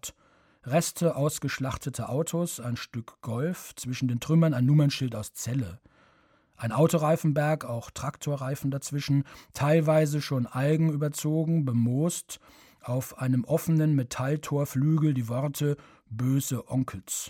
zwischen bergen an der dumme & salzwedel - in der kaserne
Produktion: Deutschlandradio Kultur/Norddeutscher Rundfunk 2009